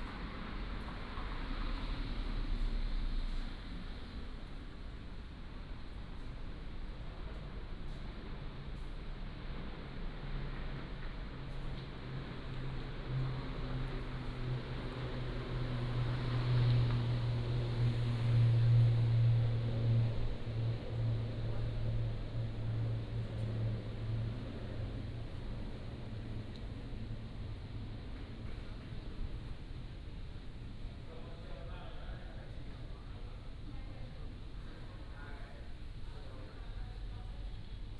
At the airport, Aircraft flying through, Traffic Sound